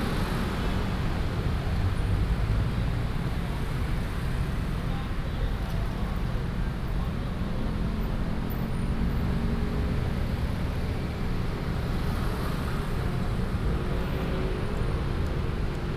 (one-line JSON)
{"title": "Chenggong 1st Rd., Ren’ai Dist., 基隆市 - Walking on the road", "date": "2016-08-04 08:14:00", "description": "Traffic Sound, Walking through the market, Walking on the road", "latitude": "25.13", "longitude": "121.74", "altitude": "13", "timezone": "Asia/Taipei"}